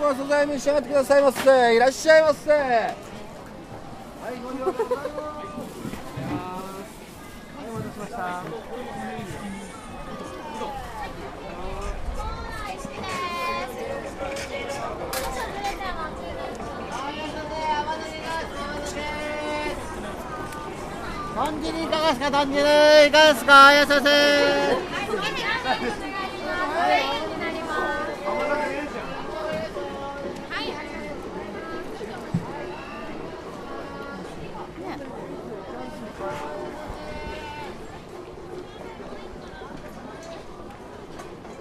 yatais@Meiji Shrine
A yatai is a small, mobile food stall in Japan.